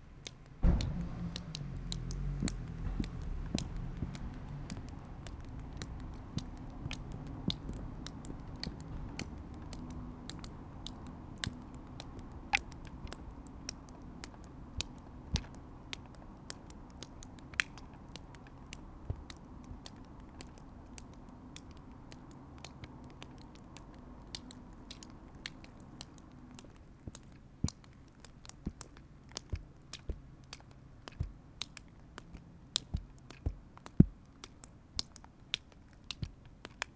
rainwater dripping from the roof; some drops hitting my deadcat (what i had not realized)
the city, the country & me: april 25, 2012
99 facets of rain
Berlin, Germany